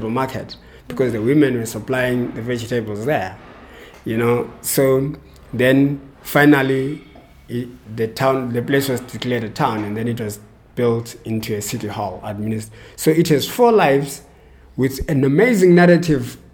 {"title": "Amakhosi Cultural Centre, Makokoba, Bulawayo, Zimbabwe - Unknown heroes...", "date": "2012-10-29 14:23:00", "description": "… going back in history, again hardly anyone knows the fascinating stories, Cont continues… such as these of women heroes …", "latitude": "-20.14", "longitude": "28.58", "altitude": "1328", "timezone": "Africa/Harare"}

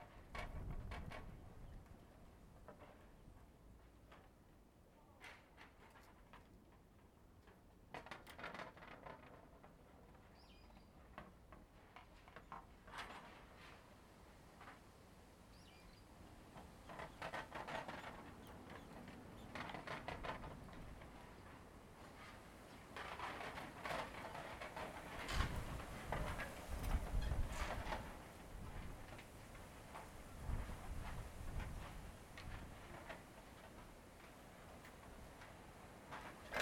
{"title": "Noguchichō, Higashimurayama-shi, Tōkyō-to, Japonia - Rattling prayers", "date": "2015-02-01 14:30:00", "description": "Rattling of wooden prayer boards against the wind. Recorded inside a cementery next to the oldest buddhist temple in the Tokyo area, which is also one of the oldest wooden buildings still standing in Japan, dating back to 1407. Recorded with Zoom H2N.", "latitude": "35.76", "longitude": "139.46", "altitude": "79", "timezone": "Asia/Tokyo"}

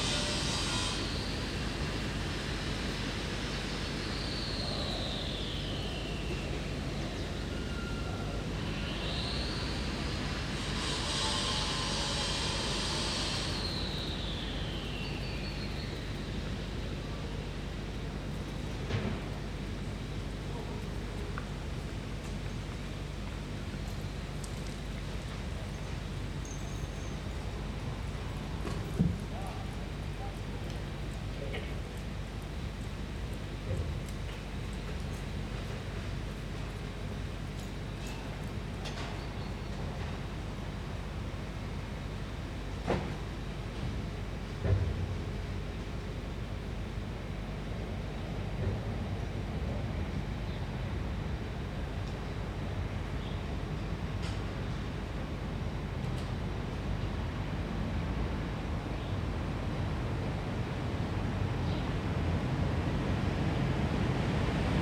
{"title": "Koloniestraße, Berlin, Deutschland - Koloniestraße, Berlin - passers-by, scrapyard, distant mosque", "date": "2012-10-12 13:40:00", "description": "Koloniestraße, Berlin - passers-by, scrapyard, distant mosque. Besides the clanking noises from the scrapyard you can also hear some vague murmurs and even singing from inside the Shiite Imam-Sadık-mosque over the street, if you listen carefully enough.\n[I used the Hi-MD-recorder Sony MZ-NH900 with external microphone Beyerdynamic MCE 82]\nKoloniestraße, Berlin - Passanten, Schrottplatz, Moschee in einiger Entfernung. Wenn man genau hinhört, kann man außer dem metallischen Scheppern vom Schrottplatz undeutlich auch die Sprechchöre und Gesänge aus der schiitischen Imam-Sadık-Moschee auf der anderen Straßenseite hören.\n[Aufgenommen mit Hi-MD-recorder Sony MZ-NH900 und externem Mikrophon Beyerdynamic MCE 82]", "latitude": "52.56", "longitude": "13.38", "altitude": "47", "timezone": "Europe/Berlin"}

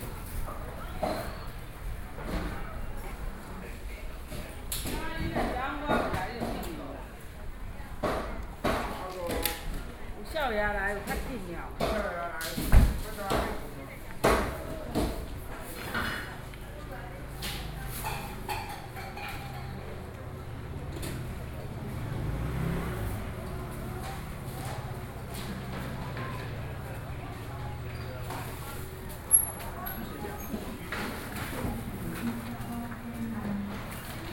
{"title": "Zhongzheng Rd., 汐止區, New Taipei City - Traditional markets", "date": "2012-11-04 07:13:00", "latitude": "25.07", "longitude": "121.66", "altitude": "25", "timezone": "Asia/Taipei"}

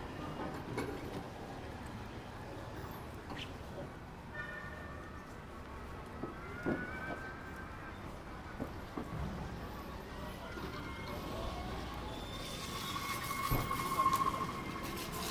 {"title": "Tsukiji Market, Chome Tsukiji, Chūō-ku, Tōkyō-to, Japan - Trying to find a way out...", "date": "2017-02-14 07:58:00", "description": "This recording was made later on, when we were trying to get out of the market complex; I simply held my recorder at my side and attempted to capture some of the madness of all the tiny whizzing carts coming past us at speed from all directions; they are little stand-up carts that are motorised, with space on the back for lots of boxes, and they go at speed and are very nifty. The traffic rules of the fish market are somewhat freestyle so as novices we mostly just tried to keep our wits about us, find a way through, and not get gunned down by the amazing little fish market vehicles.", "latitude": "35.67", "longitude": "139.77", "altitude": "12", "timezone": "Asia/Tokyo"}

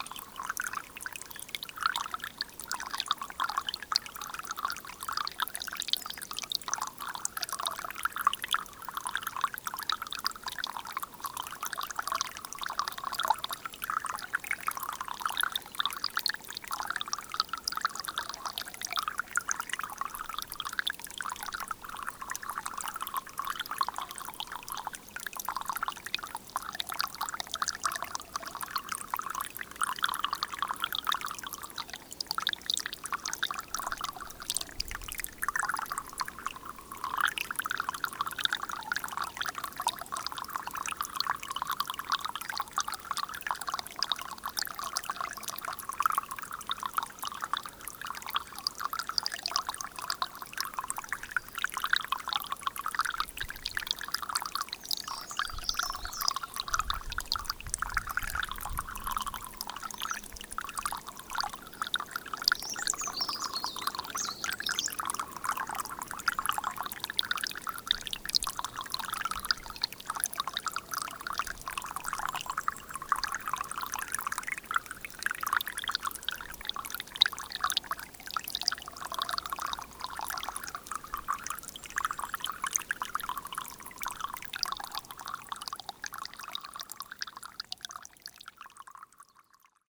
{"title": "Pourcharesses, France - Cassini mount", "date": "2016-04-28 06:30:00", "description": "The Lozere Mounts. On this desertic place, a small stream is hurtling.", "latitude": "44.41", "longitude": "3.85", "altitude": "1585", "timezone": "Europe/Paris"}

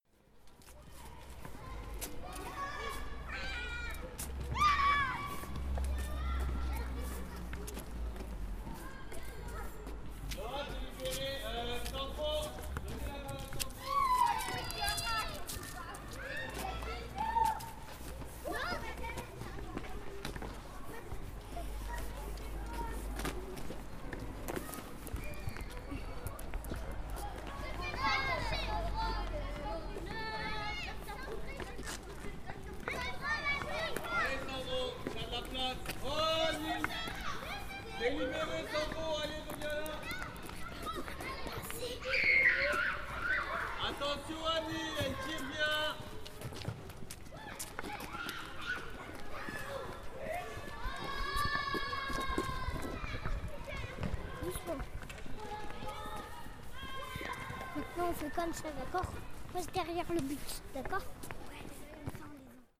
{"title": "Neudorf Ouest, Strasbourg, France - The schoolyard", "date": "2016-11-04 15:26:00", "description": "Children crossing the schoolyard after schooltime, some children are playing outside.", "latitude": "48.57", "longitude": "7.76", "altitude": "142", "timezone": "Europe/Paris"}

